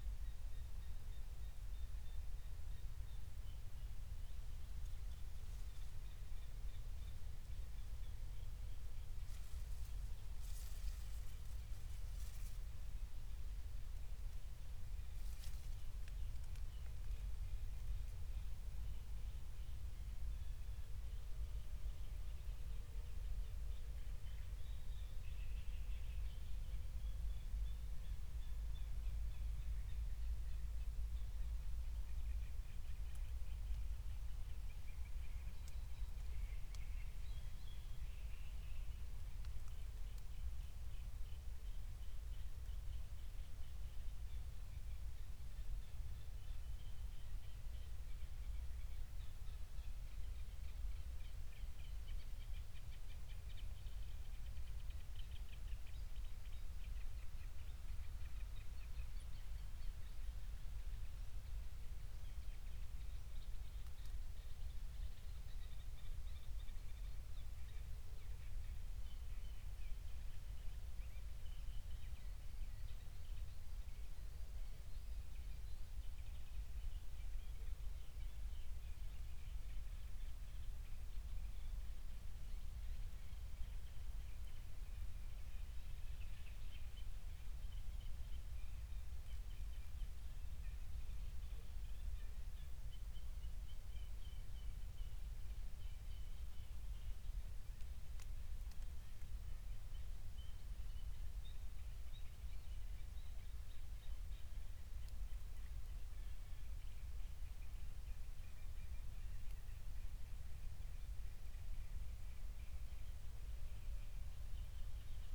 Berlin, Buch, Mittelbruch / Torfstich - wetland, nature reserve
02:00 Berlin, Buch, Mittelbruch / Torfstich 1
Deutschland, 19 June 2020